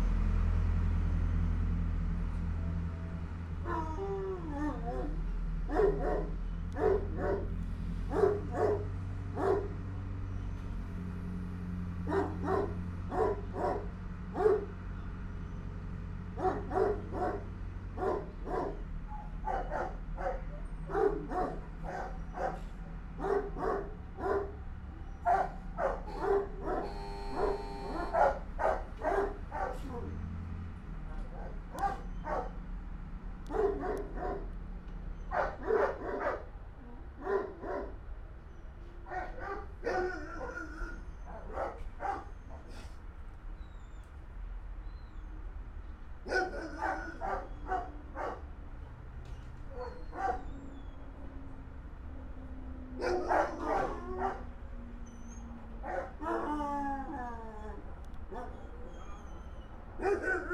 Binckhorst, Laak, The Netherlands - tractor and dogs 2
tractor (pneumatic pump) and dogs, walkie talkie and more. Recorded with binaural DPA mics and Edirol R-44
Binckhorstlaan, Laak, The Netherlands